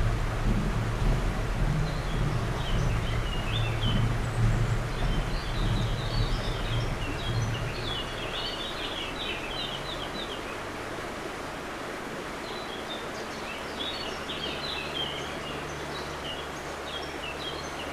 Prom. du Sierroz, Aix-les-Bains, France - Passerelle
La passerelle toute neuve en aluminium qui permet aux piétons de traverser le Sierroz, seules les fauvettes chantent encore en cette saison.